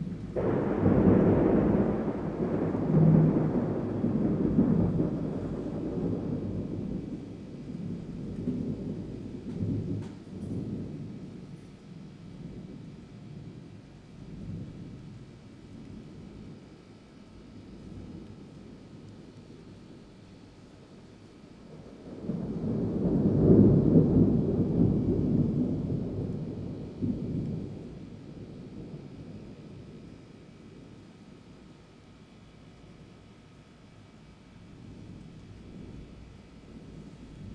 No., Fuqun Street, Xiangshan District, Hsinchu City, Taiwan - August Thunderstorm
A summer thunderstorm moves through the Fuqun Gardens community. Leaves are blown around by wind gusts, and occasional birds and vehicles are heard. Recorded from the front porch. Stereo mics (Audiotalaia-Primo ECM 172), recorded via Olympus LS-10.
1 August 2019, ~16:00